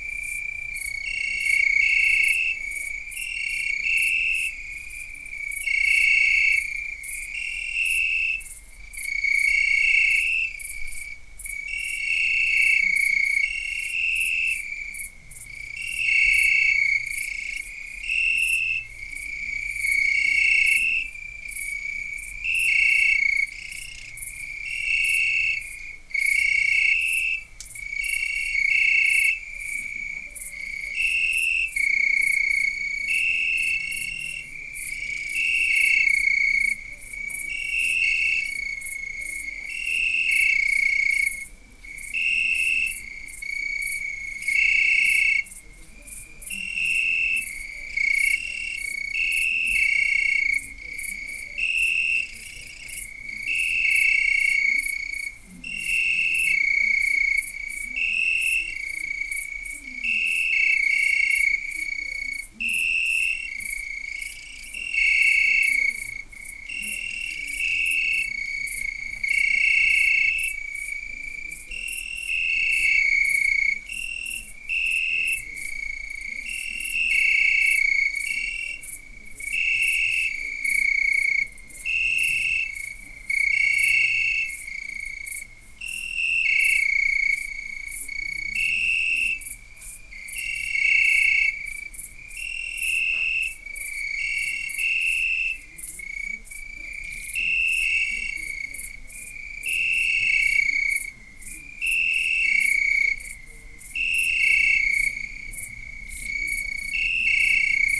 Brhlovce, Brhlovce, Slovensko - Village at night: Insects, dogs and TVs
Village at night: Insects, dogs and TVs
Recorded with LOM USI